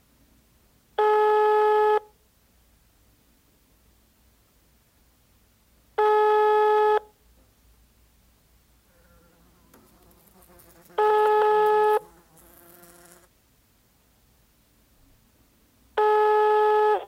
Propach, oven - cellphone and flie
flie at the window, while cellphone is ringing.
recorded july 1st, 2008.
project: "hasenbrot - a private sound diary"
Waldbröl, Germany